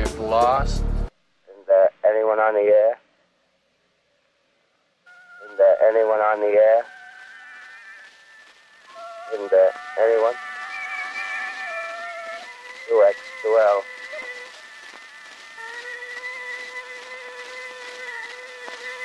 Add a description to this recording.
compilation from various sounds, related to or important for the maps project